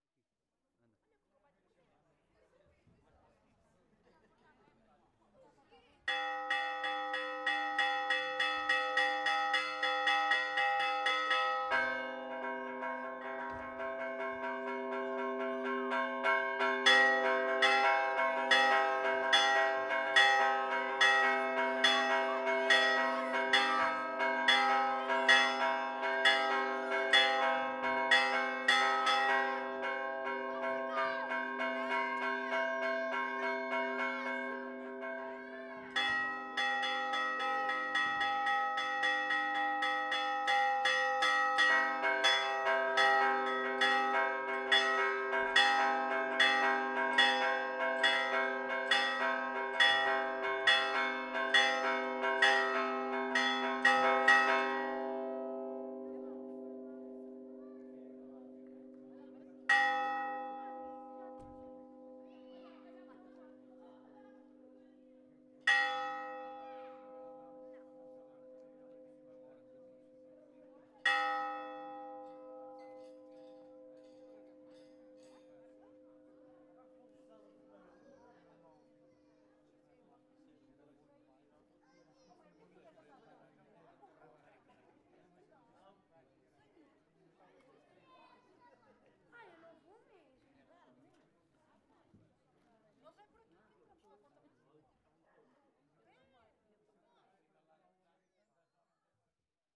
Janeiro de Baixo, Portugal - Sunday's Church Bell
The presented sound footage is the Janeiro de Baixo church bell calling the people to the religious event. The bells are actually played by the priest, no machines. We can carefully hear that the priest is enjoying playing those.
We can also hear the people gathered near the church.